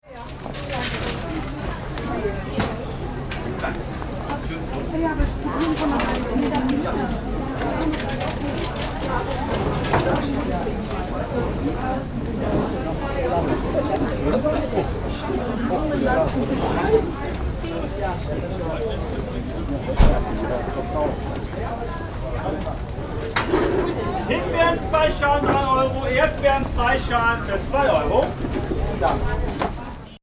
Bergisch Gladbach, Konrad-Adenauer-Platz, Wochenmarkt
17 July 2010, 12:18